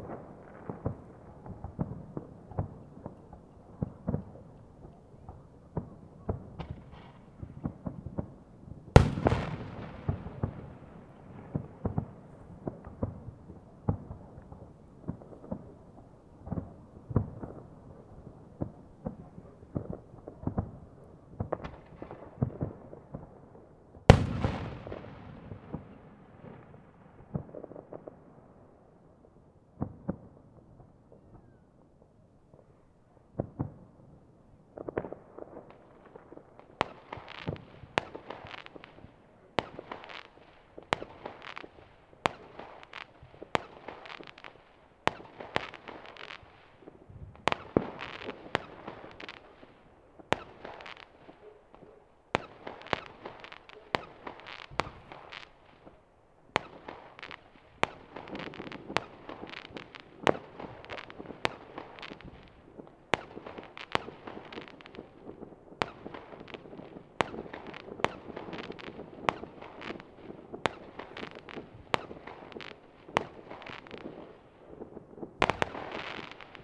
Casa do Castelo, Colmeosa - Countryside NYE fireworks from a distance
Recorded in the woods of a secluded house not far from Santa Comba Dão. Fireworks celebrating the beginning of 2020 are heard from a distance in an otherwise quiet place. This was recorded a few minutes after midnight with the internal XY mic of a Zoom H2n.